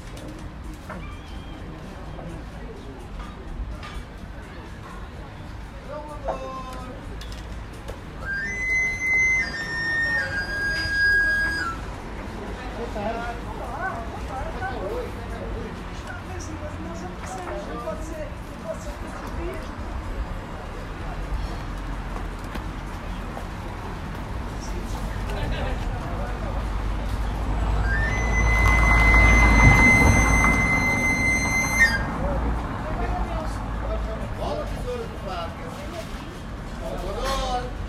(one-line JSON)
{"title": "R. 5 de Outubro, Buarcos, Portugal - Amolador at Figueira da Foz", "date": "2022-07-25 16:31:00", "description": "Amolador at Figueira da Foz, Portugal. A man riding a specially adapted bicycle to sharpen knives stops to sharpen a knife in front of a restaurant.\nLocals recognise the calling sound of the flute and gather around the \"Amolador\" (knife grinder)", "latitude": "40.16", "longitude": "-8.88", "altitude": "17", "timezone": "Europe/Lisbon"}